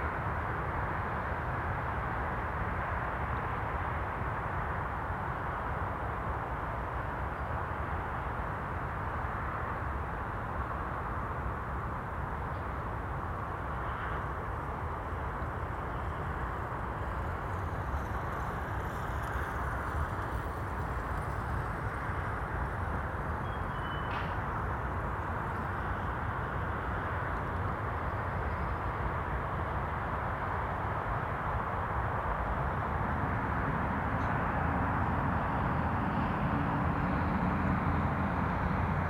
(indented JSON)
{
  "title": "Contención Island Day 9 outer southwest - Walking to the sounds of Contención Island Day 9 Wednesday January 13th",
  "date": "2021-01-13 07:47:00",
  "description": "The Drive Moor Place Woodlands Oaklands Avenue Oaklands Grandstand Road High Street Moor Crescent The Drive\nA flock of 20 Golden Plovers fly\nlooping\ncircling\nI lose them as they fly over my head",
  "latitude": "54.99",
  "longitude": "-1.63",
  "altitude": "71",
  "timezone": "Europe/London"
}